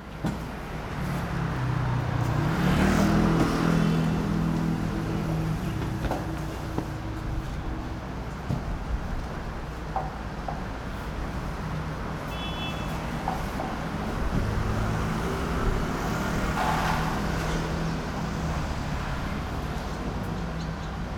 Entrance to traditional markets, Road around the corner, Discharge, Small alley, Traditional Market, Traffic Sound
Sony PCM D50